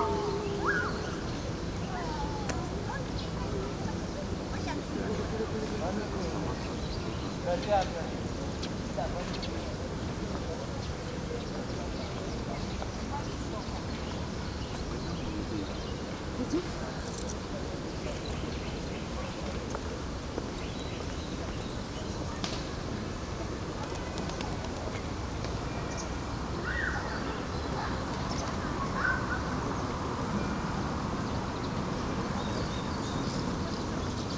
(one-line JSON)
{"title": "Park near Monastery, Dzerginsk", "date": "2011-05-22 15:30:00", "description": "Dzerginsk, park near monastery", "latitude": "55.62", "longitude": "37.84", "altitude": "128", "timezone": "Europe/Moscow"}